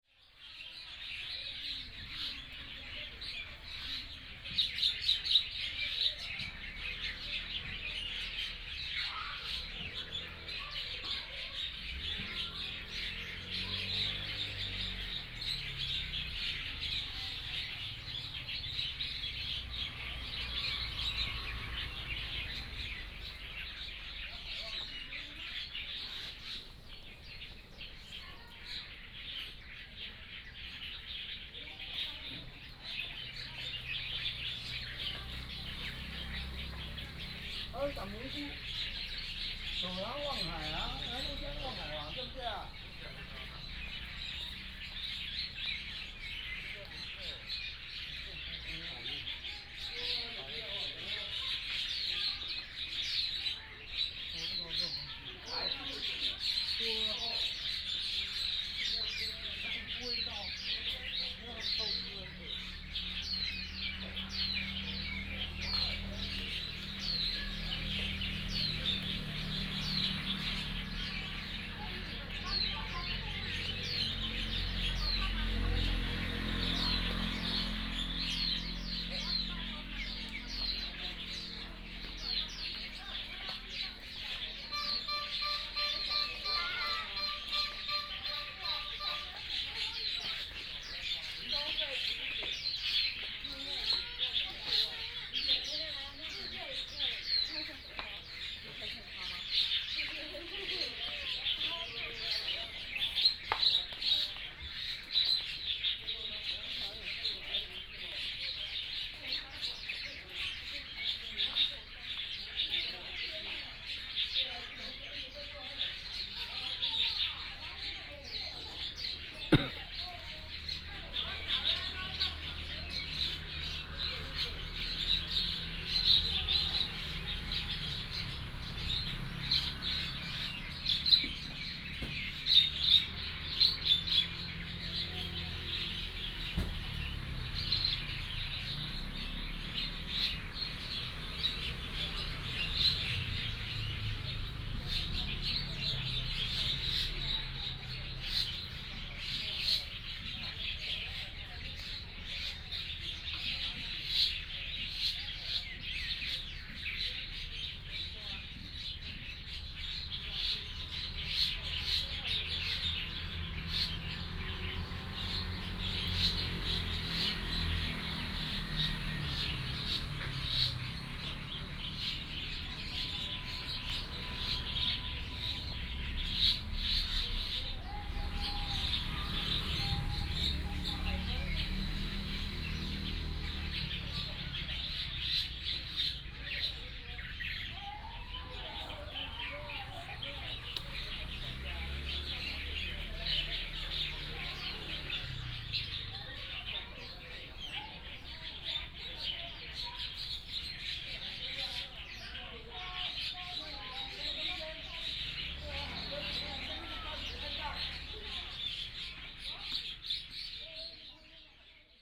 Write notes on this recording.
Birds singing, Binaural recordings, Sony PCM D100+ Soundman OKM II